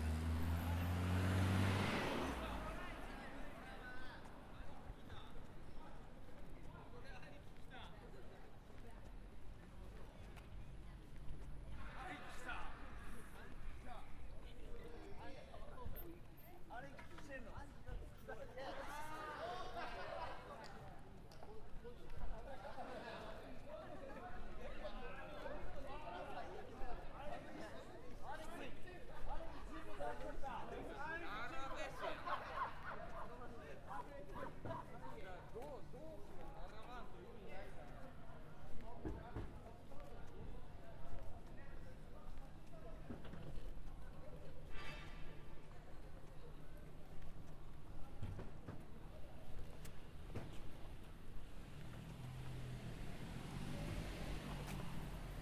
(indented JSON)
{
  "title": "Japan, Tokyo, Suginami City, Kōenjiminami, 三井住友銀行高円寺ビル - Koenji at night",
  "date": "2012-04-22 14:28:00",
  "description": "This recording was taken while strolling around Koenji in the evening.",
  "latitude": "35.70",
  "longitude": "139.65",
  "altitude": "53",
  "timezone": "Asia/Tokyo"
}